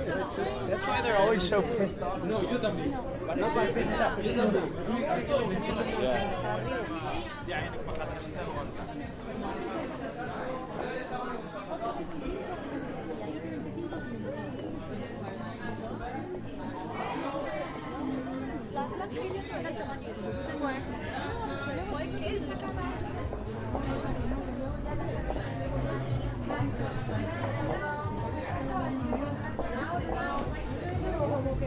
Caminando por la calle Calderería Nueva, Granada - Paisaje sonoro Calle Calderería Nueva
Calle Calderería Nueva, 18010 Granada.
Caminando calle abajo. Grabado con ZOOM-H1.